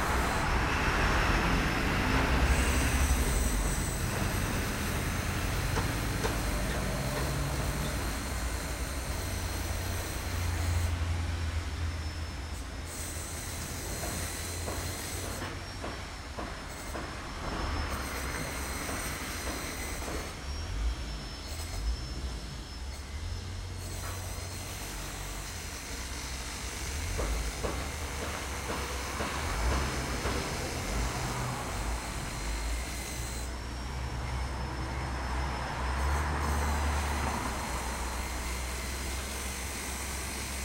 leipzig lindenau, bahnhof lindenau, baustelle
baustelle am bahnhof lindenau, baugeräusche, straszenbahn, keine züge.
Leipzig, Deutschland, 5 September 2011, ~23:00